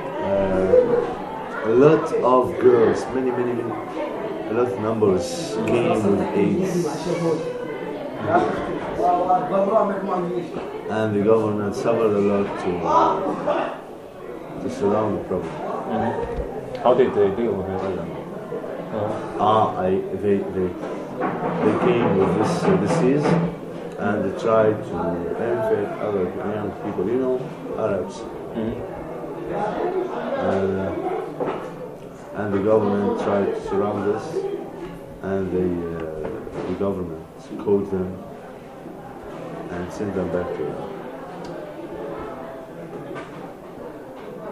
:jaramanah: :english teacher at skyline center: - sixteen
Syria